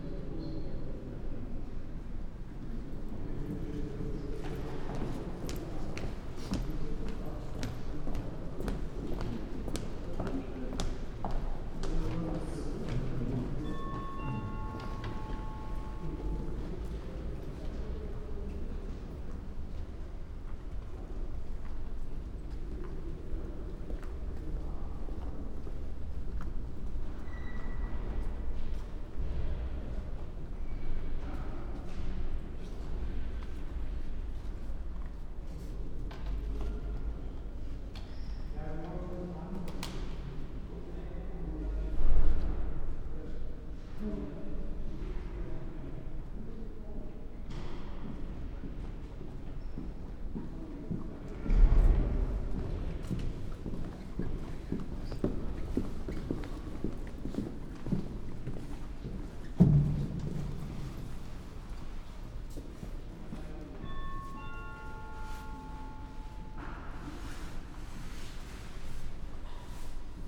berlin, john-f.-kennedy-platz: townhall - the city, the country & me: townhall, citizen centre
ambience of the hall in front of the citizen centre
the city, the country & me: october 29, 2014
Berlin, Germany, 29 October 2014, ~10:00